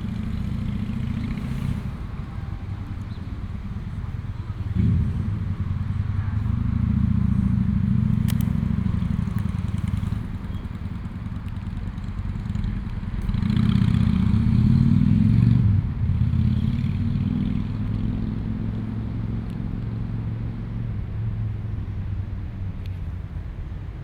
Walk around Molo dei Bersaglieri, Trieste TS, Italia - Trieste 1st of the year 2022: soundwalk
Trieste 1st of the year 2022: soundwalk
Saturday January 1st, 2022, walking in the centre town, on the pier, in and around Piazza Unità d'Italia.
Start at 2:15 p.m. end at 3:27 p.m. duration of recording 1h'12’21”
The entire path is associated with a synchronized GPS track recorded in the (kmz, kml, gpx) files downloadable here: